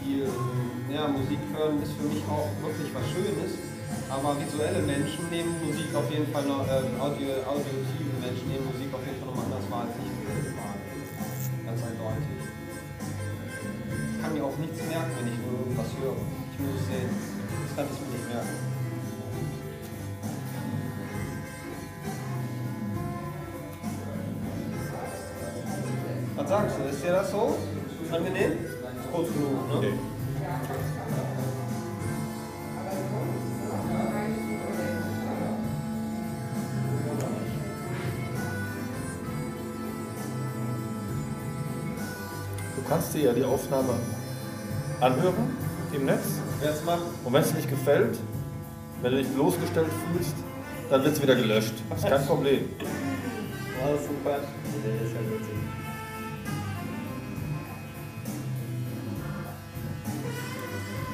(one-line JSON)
{"title": "at the hairdressers, cologne", "date": "2009-04-29 19:53:00", "description": "talking about radio aporee at the hairdressers.\nrecorded nov 11th, 2008.", "latitude": "50.92", "longitude": "6.96", "altitude": "57", "timezone": "GMT+1"}